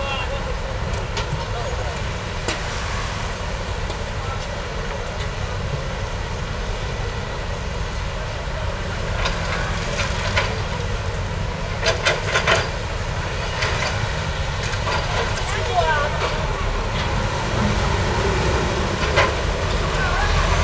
Taipei, Taiwan - The construction site
The construction site, Rode NT4+Zoom H4n
10 December 2011, ~11:00